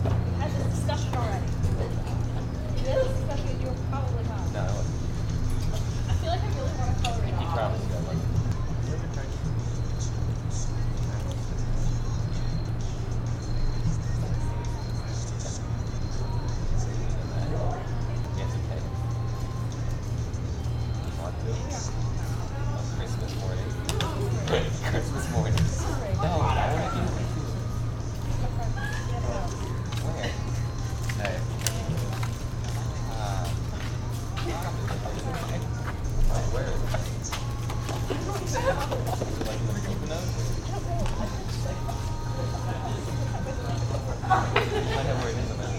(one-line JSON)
{"title": "The College of New Jersey, Pennington Road, Ewing Township, NJ, USA - Outside of a TCNJ Residence Hall", "date": "2013-10-16 04:30:00", "description": "This was recorded outside of The College of New Jersey's freshman Wolfe Hall. It is early evening/mid-day and it is not particularly busy. The ambience is likely from a heating unit or other machinery nearby on campus.", "latitude": "40.27", "longitude": "-74.78", "altitude": "36", "timezone": "America/New_York"}